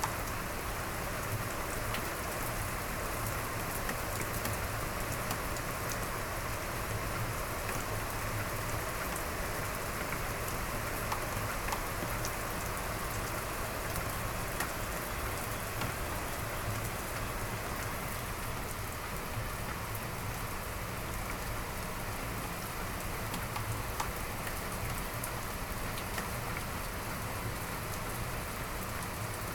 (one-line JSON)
{"title": "Rain sound", "date": "2010-06-16 04:54:00", "description": "愛知 豊田 rain", "latitude": "35.14", "longitude": "137.14", "altitude": "89", "timezone": "Asia/Tokyo"}